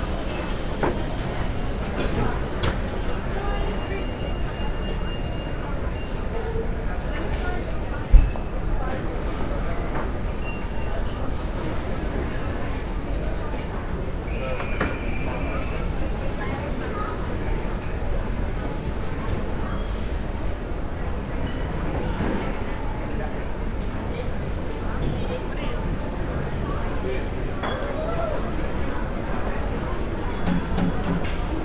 Amsterdam Airport Schiphol, Netherlands - cafe bar
October 2014